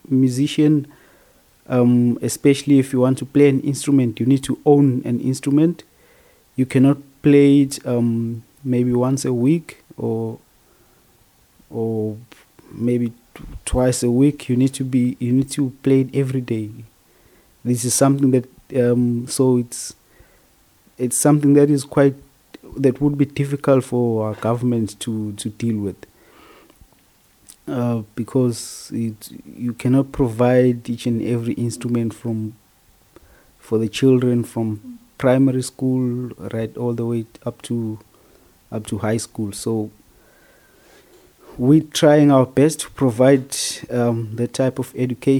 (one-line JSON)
{"title": "FUgE, Hamm, Germany - Lungi tells about teaching music…", "date": "2013-01-15 15:45:00", "description": "And here is one of the interviews in English recorded during the same workshop. Sabnam from Bangladescg interviews Lungi from South Africa.\nThe complete playlists is archived here:", "latitude": "51.68", "longitude": "7.82", "altitude": "66", "timezone": "Europe/Berlin"}